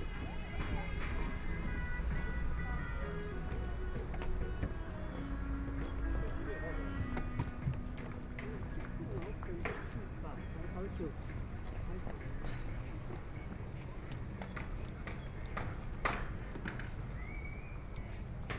Bihu Park, Taipei City - Walking through the park
Walking through the park, Traffic Sound, Construction noise, Take a walk, Buskers
Binaural recordings
Taipei City, Taiwan